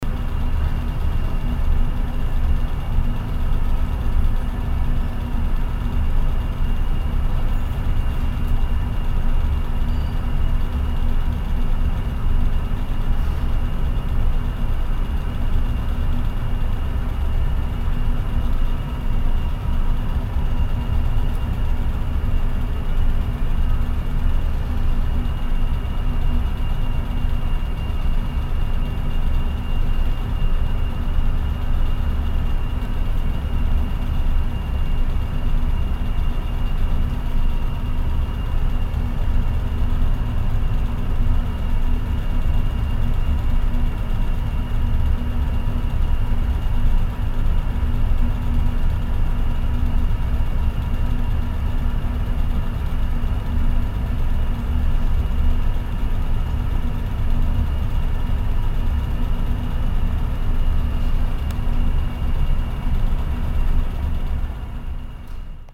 frankfurt, mouson tower, the central ventilation system
the constant humming of the central ventilation system at the mouson tower
soundmap d - social ambiences and topographic field recordings